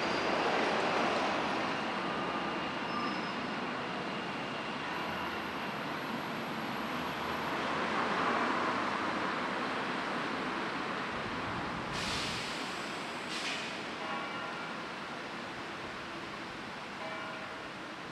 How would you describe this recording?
On Friday, September 9, 2022, at 12:00 pm, various church bells across the UK sounded off in tribute to the death of Her Late Majesty Queen Elizabeth ll, following her passing on September 8th, 2022. The recording took place on the front lawn of the Lanyon Building, the main building of Queen’s University Belfast, which also brought its main flag down to half-mast position. Sounds of daily life can be heard, ranging from cyclists, pedestrians, motor and emergency vehicles, birds, pedestrian crossings, and other local sounds in the area. The Church Bells were subtle and found gaps in the environmental soundscape to emerge and be heard. Each varied in duration, loudness, and placement in the listening experience. The bells mark a time of respect, change, and remembrance after a 70-year reign from the late Queen.